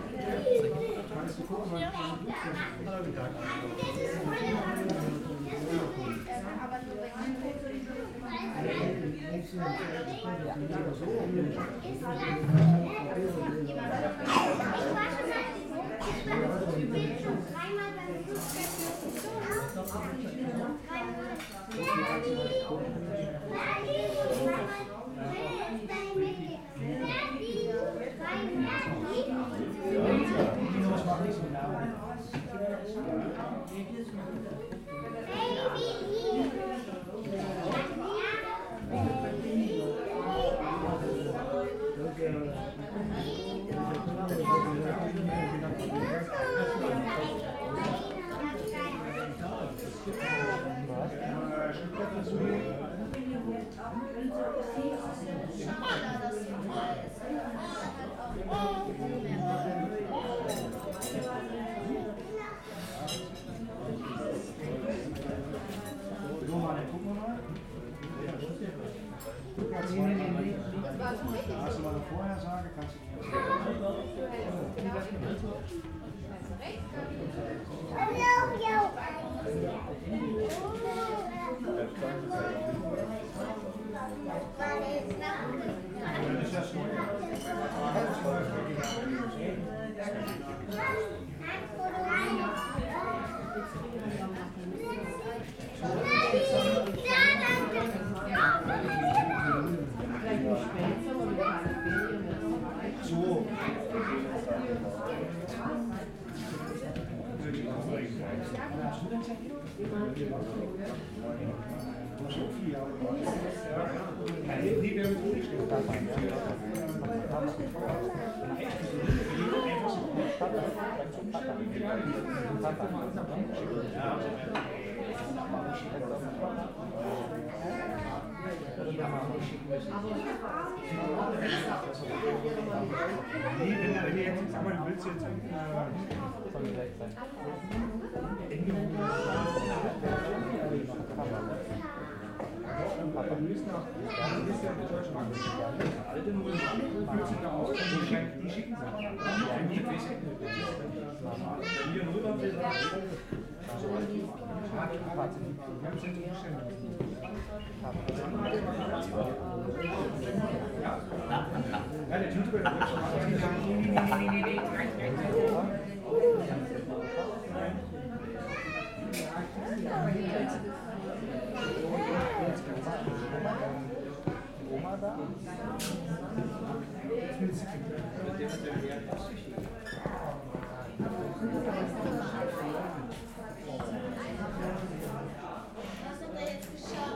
{"title": "Il Salento - Italian Restaurant Interior", "date": "2013-01-17 12:00:00", "description": "Sunday afternoon inside a italian restaurant. A few people sitting and talking german, a few kids playing", "latitude": "48.40", "longitude": "10.01", "altitude": "469", "timezone": "Europe/Berlin"}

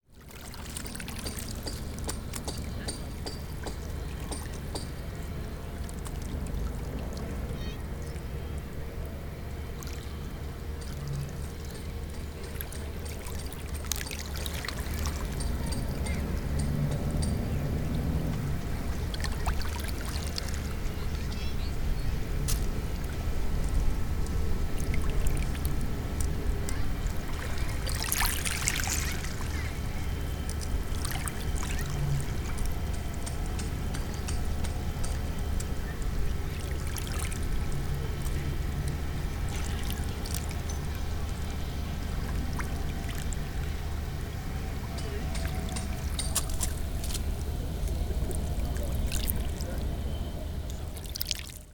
{"title": "London, UK - Outside Tate Modern, Low Tide", "date": "2013-02-01 12:10:00", "description": "Small waves during low tide, some banging going on in the background.", "latitude": "51.51", "longitude": "-0.10", "altitude": "5", "timezone": "Europe/London"}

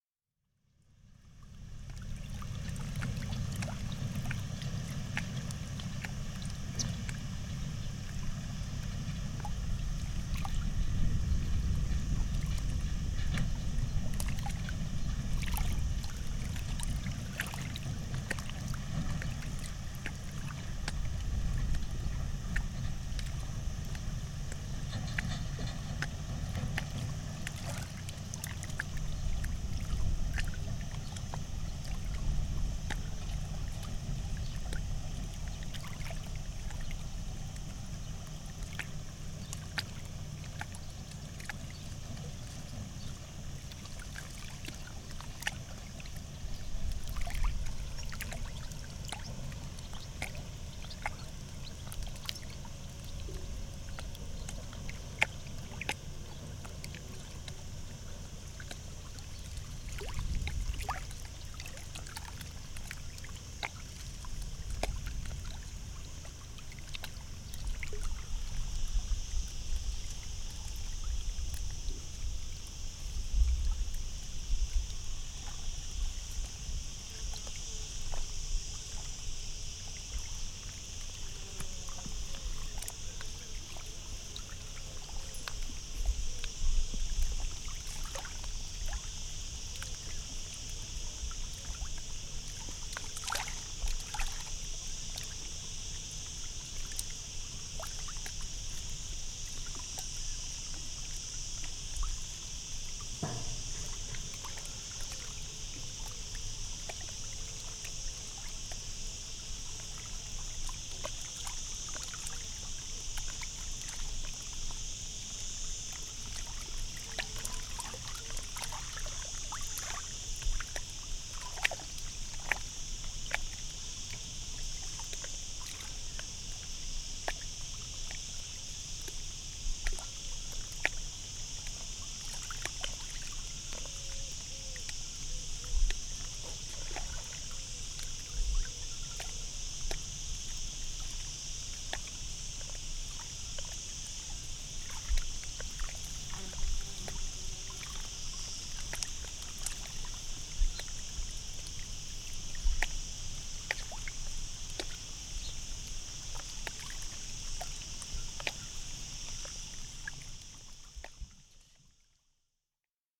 {"date": "2010-08-08 12:30:00", "description": "Praia fluvial da Congida. Freixo de Espada a Cinta. Mapa Sonoro do Rio Douro By the banks of the Douro in Congida, Freixo de Espada a Cinta. Douro River Sound Map", "latitude": "41.08", "longitude": "-6.78", "altitude": "205", "timezone": "Europe/Lisbon"}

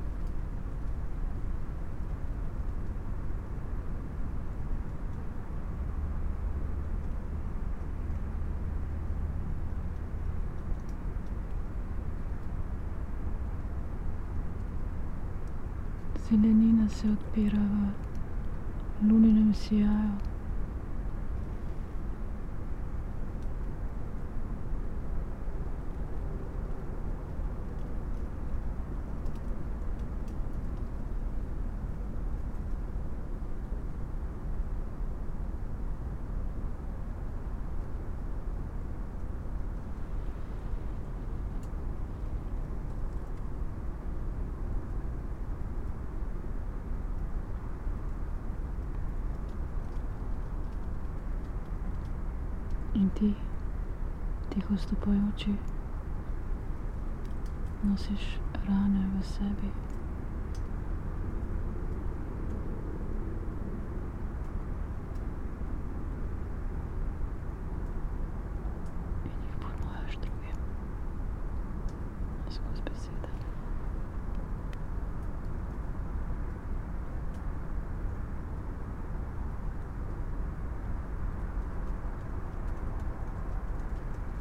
{"title": "tree crown poems, Piramida - lunar", "date": "2013-04-25 21:57:00", "description": "partial lunar eclipse, full moon, whisperings and spoken words, traffic hum", "latitude": "46.57", "longitude": "15.65", "altitude": "373", "timezone": "Europe/Ljubljana"}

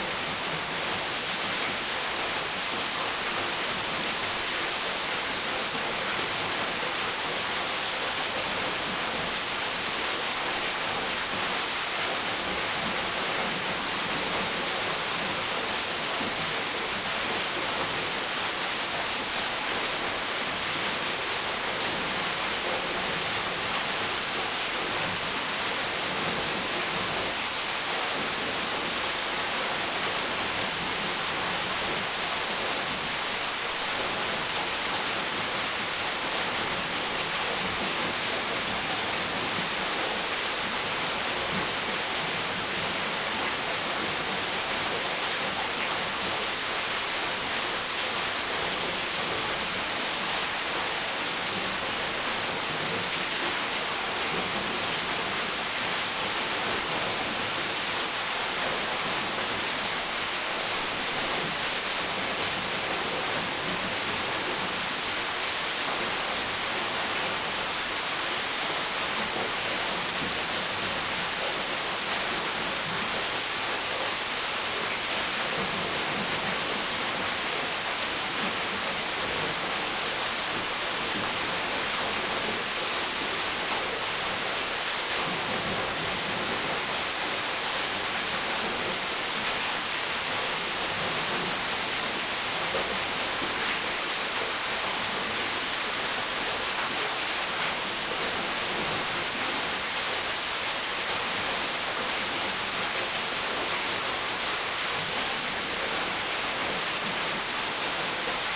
{"title": "Koseška korita, Kobarid, Slovenia - Waterfall Brusnik", "date": "2022-06-26 08:17:00", "description": "Waterfal Brusnik in a gorge.\nLom Uši Pro, MixPreII", "latitude": "46.25", "longitude": "13.62", "altitude": "454", "timezone": "Europe/Ljubljana"}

